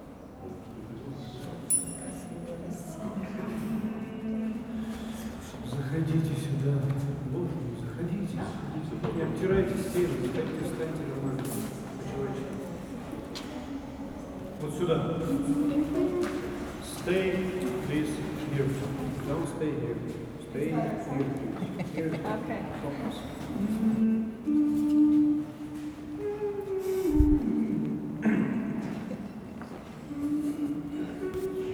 St. Basils Cathedral, Tverskoy District, Moscow, Russia - Men Chorus Surprise
Climbing through the tiny claycaves of this veryvery old orthodox christian cathedral, overly painted, repainted and decorated with colourful horror-film-like stiched, carved, drawn, gold framed oil-and frescopainted frowns, figures, gestures and situations we heard these voices in the dark. An accidental find of a men chorus, happily singing for litte audiences that squeeze all of a sudden in from before unseen corners.